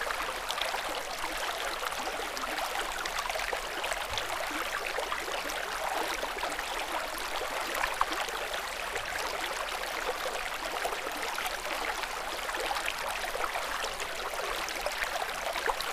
Water processing plant tower at Paljassaare - benfeita, água de outono
pure running water in a little river (center portugal)